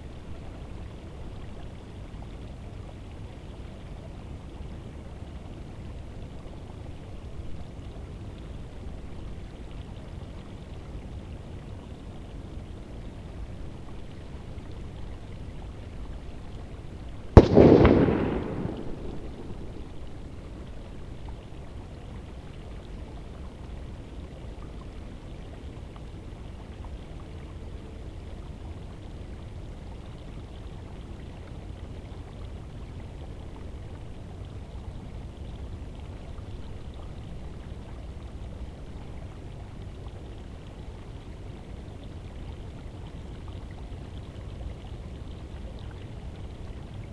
schwedt/oder: riverbank - the city, the country & me: drain pipe
water from a drain pipe runs in to the oder river, some boys play with fireworks
the city, the country & me: january 2, 2014